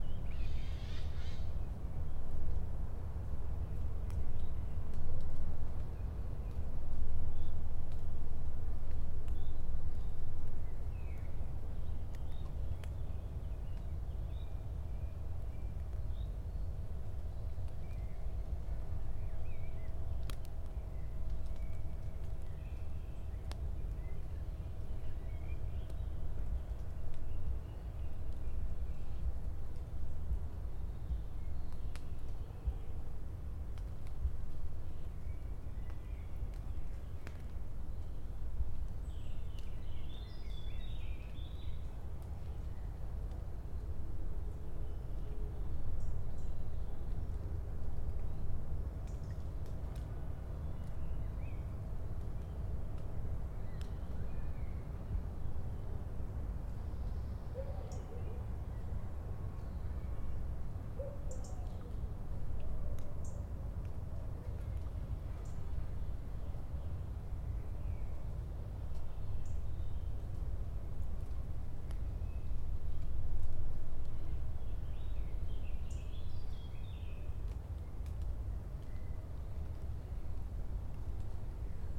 Panovec, Nova Gorica, Slovenija - Tih dan po dežju ob trim stezi v Panovcu
Surprisingly quiet take, some water drops close to the end, birds chirping.
Recorded with H5n + AKG C568 B